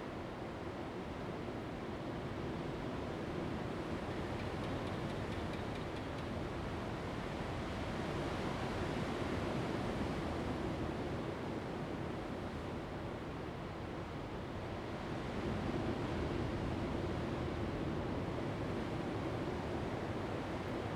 Pomelo Lake, Lüdao Township - Inside the cave
Inside the cave, Sound of the waves
Zoom H2n MS +XY